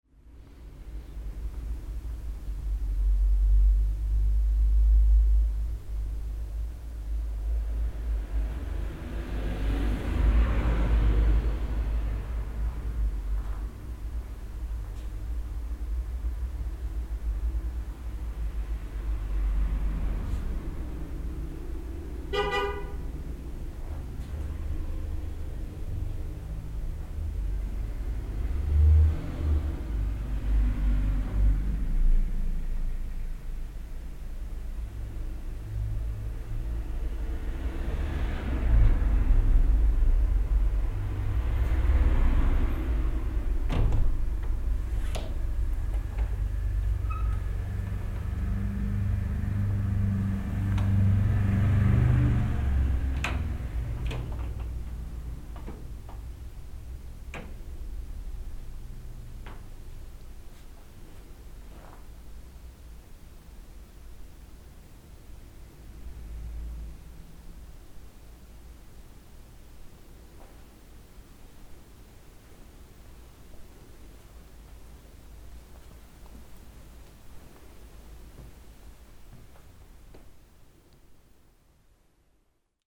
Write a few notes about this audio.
You are gone, and I am here to listen your absence. Atmosphere in the corridor of an old house, in 6 avenue Galliéni, St Girons, France. Cars are there but it's the silence which is here...